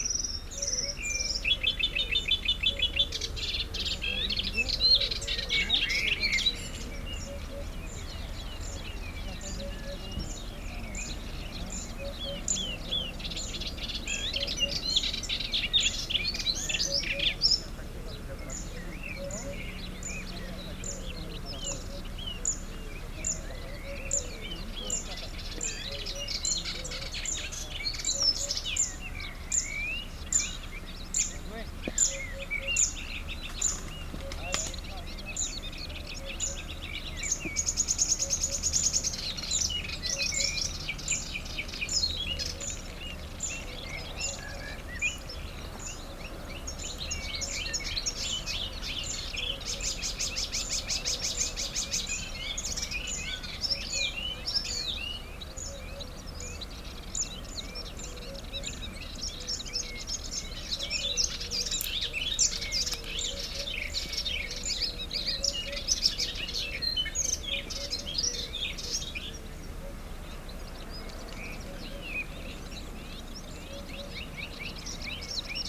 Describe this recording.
Here, 29 persons died because of a terrible tempest called Xynthia. All houses were destroyed. Now the place is a golf. You can here the very excited Eurasian Blackcap, the Eurasian Hoopoe (hou-hou-hou) and the Zitting Cisticola (zzi zzi zzi...).